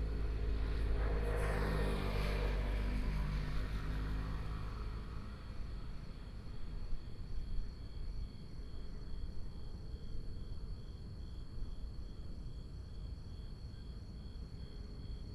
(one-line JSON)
{"title": "中科西區水塔, Xitun Dist., Taichung City - Subtle whine", "date": "2017-10-09 19:27:00", "description": "Subtle whine, Traffic sound, Binaural recordings, Sony PCM D100+ Soundman OKM II", "latitude": "24.20", "longitude": "120.60", "altitude": "243", "timezone": "Asia/Taipei"}